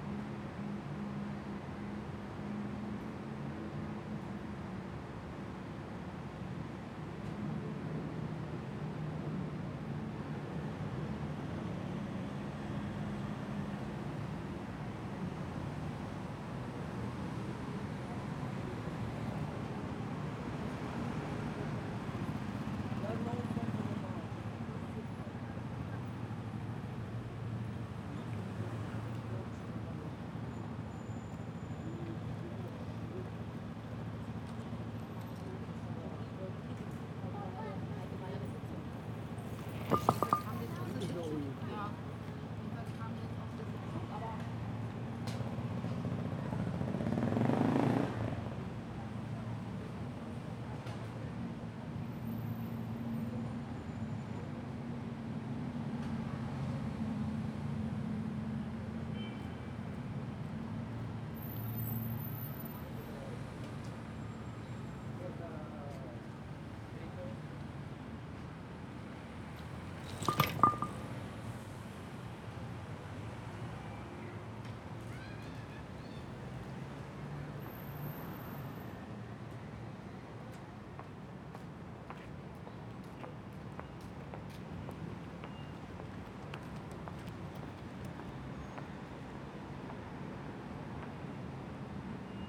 Prinzenallee, Soldiner Kiez, Wedding, Berlin - Prinzenallee - Loose paving slab in the bicycle lane
Lose Bodenplatte auf dem Fahrradweg.
Für über zwei Jahre bildete das Geräusch der wackelnden Bodenplatte so etwas wie eine unscheinbare "Soundmark" (R. Murray Schafer) dieser Kreuzung. Im September 2013 wurde sie schließlich repariert, nun ist sie verstummt.
Prinzenallee, Berlin - Loose paving slab in the bicycle lane. Having been a somewhat unpretentious 'soundmark' (R. Murray Schafer) of this street corner for at least more than two years, the pavement slab has been repaired in September 2013 - now silent.
[I used a Hi-MD-recorder Sony MZ-NH900 with external microphone Beyerdynamic MCE 82]